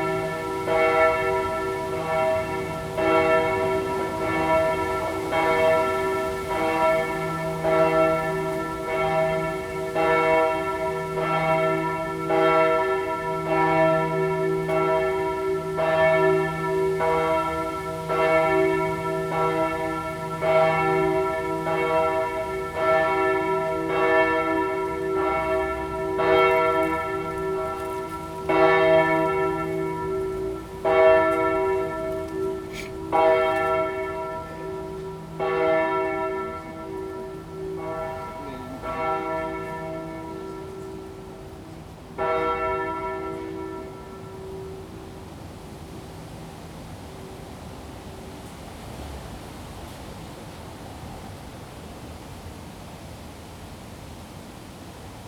Winterfeldtplatz, Berlin, Deutschland - Winterfeldtplatz (Evening)
evening on the winterfeldtplatz in berlin-schöneberg. you can hear the wind in the trees and the bells of the church ringing 7PM.
Berlin, Germany